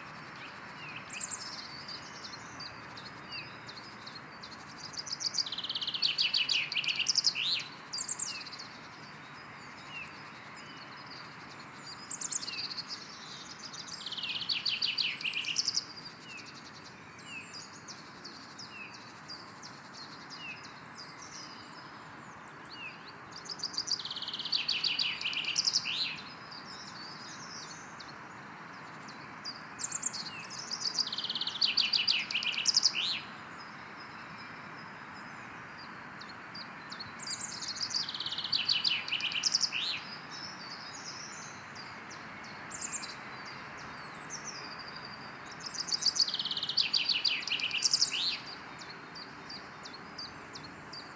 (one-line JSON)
{"title": "OHRBERG PARK, Hameln - Bird Sounds (Sound Recording Of Birds In The Park)", "date": "2021-04-20 12:07:00", "description": "Nice sunny and warm afternoon in the Ohrberg Park and birds were very happy and their voices show that! Mostly in the park are \"Common Chaffinch & Willow Warbler\" birds.\nTascam DR100-MKIII Handheld Recorder\nMikroUSI Omni directional Stereo Matched Microphones", "latitude": "52.07", "longitude": "9.35", "altitude": "103", "timezone": "Europe/Berlin"}